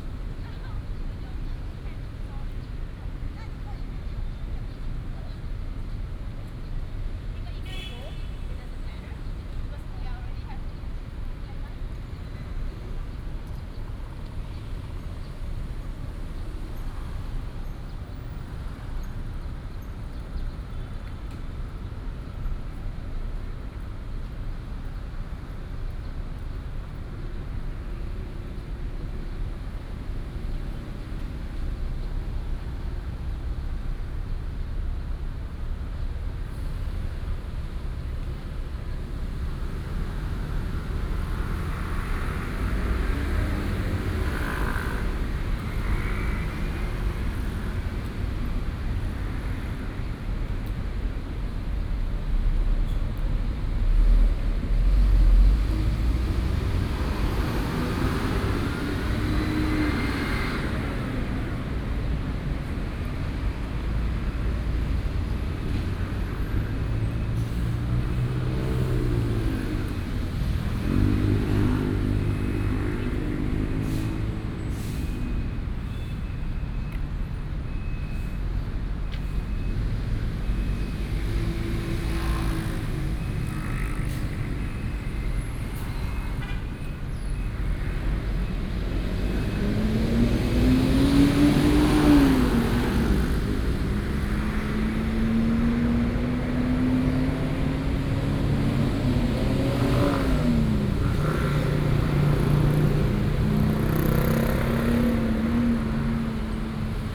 居安公園, Da’an Dist., Taipei City - In the park entrance
End of working hours, Footsteps and Traffic Sound